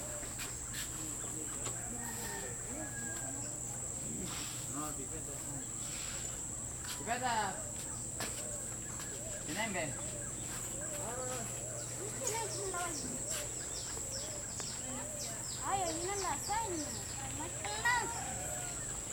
Tienda en El Horno, Magdalena, Colombia - Tienda
Una tienda a la orilla del camino. Los niños juegan y las motos pasan.